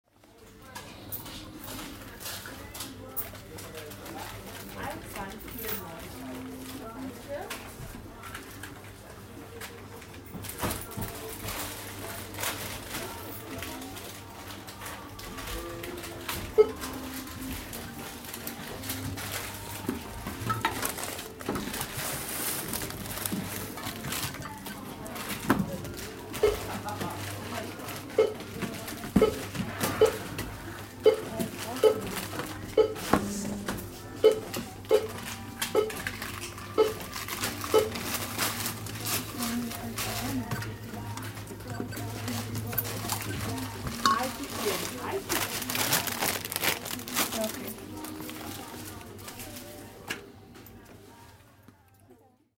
Ruppichteroth, inside supermarket - Ruppichteroth, inside super market 2
(recording continues)
recorded july 1st, 2008.
project: "hasenbrot - a private sound diary"